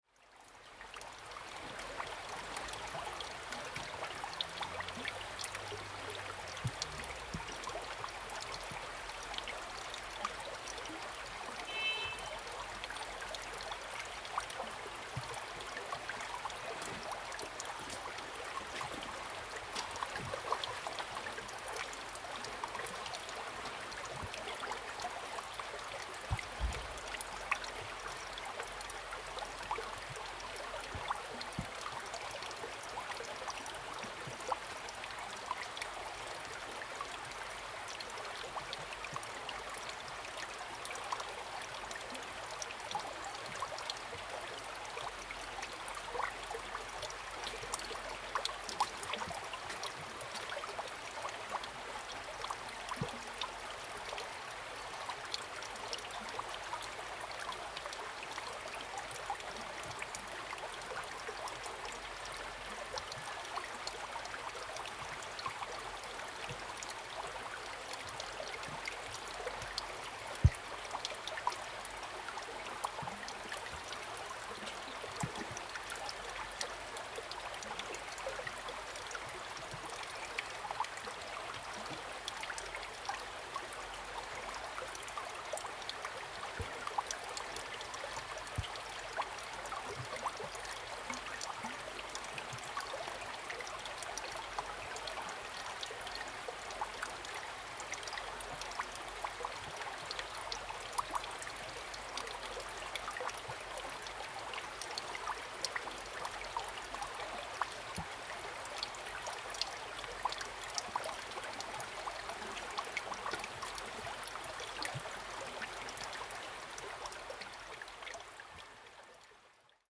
Sound of water, Small streams, Streams and Drop
Zoom H2n MS+XY
Puli Township, Nantou County, Taiwan, 28 April 2016, ~10:00